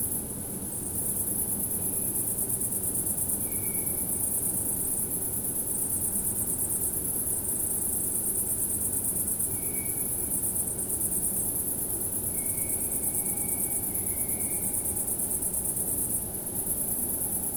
brandenburg/havel, kirchmöser, nordring: garden - the city, the country & me: garden by night
crickets, overhead crane of a track construction company and freight trains in the distance
the city, the country & me: august 23, 2016
23 August 2016, 23:30